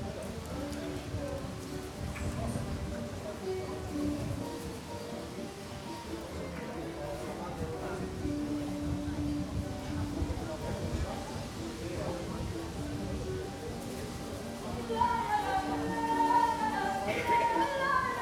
Lisbon, Travessa do Funil - tapping a fado performance
around this area restaurants and bars are known for fado performances. a lady and the owner of the place sing for the customers. recording from the street across the restaurant.
Lisbon, Portugal, 26 September